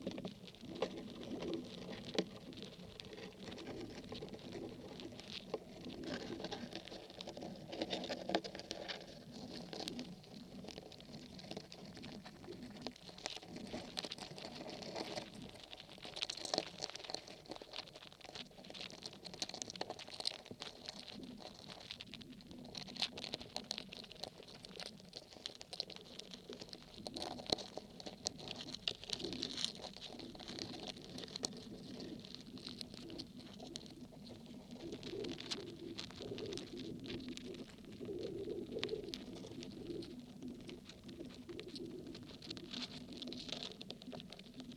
Lithuania, Nuodeguliai, ants on the stump
Old village cemetery, some half rotten birch inn the middle of it. And the anthill at the birch. I placed contact mics and so here are ants walking through on wood and wind playing